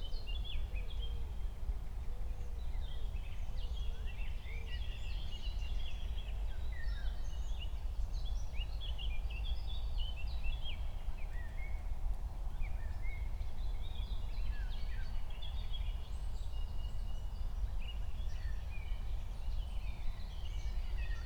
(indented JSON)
{
  "title": "Berlin, Buch, Mittelbruch / Torfstich - wetland, nature reserve",
  "date": "2020-06-19 07:00:00",
  "description": "07:00 Berlin, Buch, Mittelbruch / Torfstich 1",
  "latitude": "52.65",
  "longitude": "13.50",
  "altitude": "55",
  "timezone": "Europe/Berlin"
}